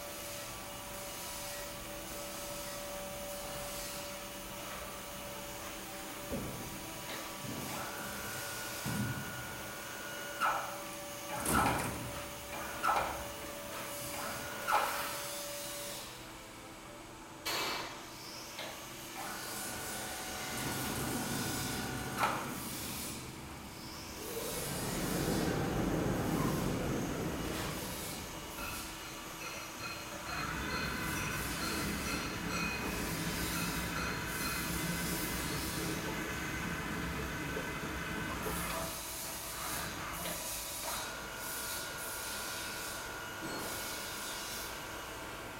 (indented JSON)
{
  "title": "lippstadt, locksmithery/metalworking",
  "description": "recorded june 23rd, 2008.\nproject: \"hasenbrot - a private sound diary\"",
  "latitude": "51.67",
  "longitude": "8.37",
  "altitude": "79",
  "timezone": "GMT+1"
}